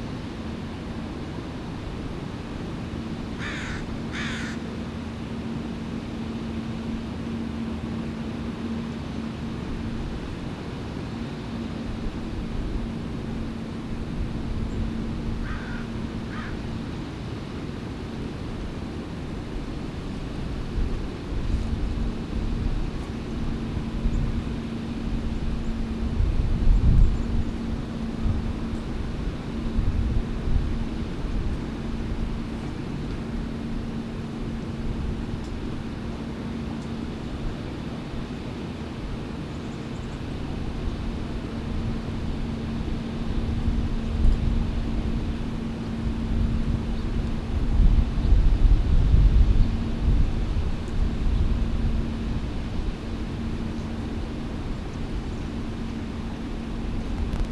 Ballard Locks - Ballard Locks #1
The Hiram M. Chittenden Locks, popularly known as the Ballard Locks, raise and lower boats traveling between freshwater Lake Washington and saltwater Puget Sound, a difference of 20 to 22 feet (depending on tides). A couple hundred yards downstream is a scenic overlook, almost directly beneath the Burlington Northern trestle bridge shown on the cover. From that spot we hear a portrait of commerce in 3-dimensions: by land, by air and by sea.
Major elements:
* The distant roar of the lock spillway and fish ladder
* Alarm bells signifying the opening of a lock
* Boats queuing up to use the lock
* Two freight trains passing overhead (one long, one short)
* A guided tour boat coming through the lock
* Planes and trucks
* Two walkers
* Seagulls and crows